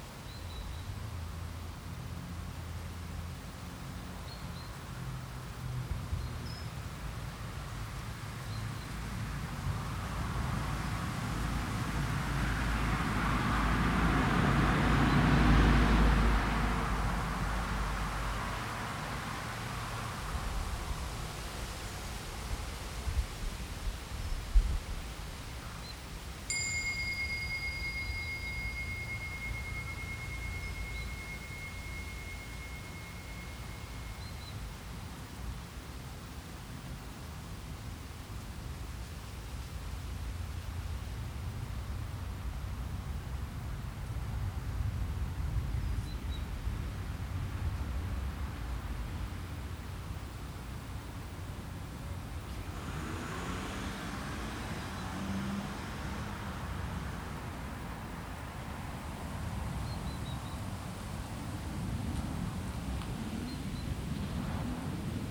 {"title": "Memorial Garden, St Leonard's Church, Woodcote, Oxon - St Leonard's Memorial Garden", "date": "2017-07-31 12:25:00", "description": "A twenty minute meditation sitting beside the memorial garden at St Leonard's Church in Woodcote. Recorded using the built-in microphones of a Tascam DR-40 as a coincident pair.", "latitude": "51.53", "longitude": "-1.07", "altitude": "162", "timezone": "Europe/London"}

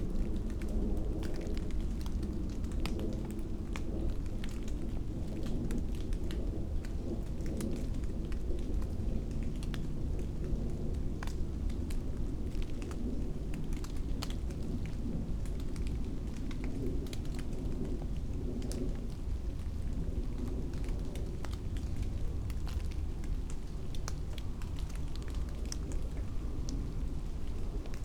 {"title": "Beselich Niedertiefenbach, Deutschland - night ambience, light rain", "date": "2021-12-23 22:24:00", "description": "place revisited, night ambience\n(Sony PCM D50, Primo EM 172)", "latitude": "50.44", "longitude": "8.15", "altitude": "243", "timezone": "Europe/Berlin"}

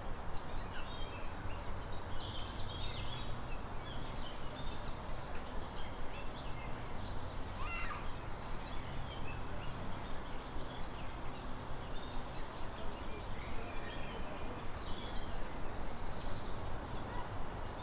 {"title": "Anykščių g., Kaunas, Lithuania - Calm suburban atmosphere", "date": "2021-05-14 15:20:00", "description": "Calm and idyllic atmosphere in the inner city suburban neighborhood. Birds, distant traffic, one car passing by at one time, sounds of people working in the distance. Recorded with ZOOM H5.", "latitude": "54.91", "longitude": "23.92", "altitude": "73", "timezone": "Europe/Vilnius"}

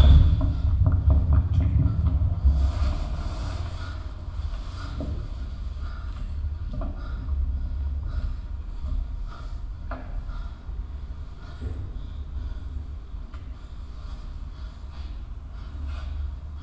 {"title": "Düsseldorf, tanzhaus nrw, main stage, performance - düsseldorf, tanzhaus nrw, aufführung im grossen saal", "date": "2009-01-24 17:33:00", "description": "tanzhaus nrw, at the main stage - sound of a dance performance\nsoundmap nrw: social ambiences/ listen to the people - in & outdoor nearfield recordings", "latitude": "51.22", "longitude": "6.80", "altitude": "41", "timezone": "Europe/Berlin"}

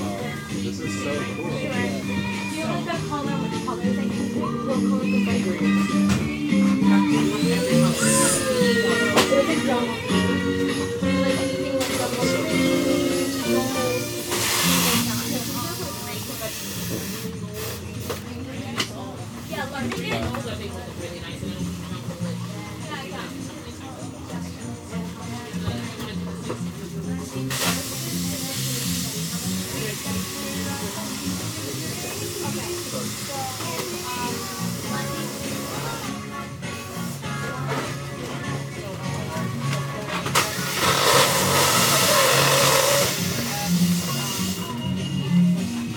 {"title": "W Broadway, New York, NY, USA - At the Balloon Store", "date": "2019-10-04 14:24:00", "description": "Inside a balloon store:\nSounds of balloons being filled and popping;\na customer is trying to buy a specific kind of balloons;\nmusic playing in the background.\nZoom H6", "latitude": "40.72", "longitude": "-74.01", "altitude": "33", "timezone": "America/New_York"}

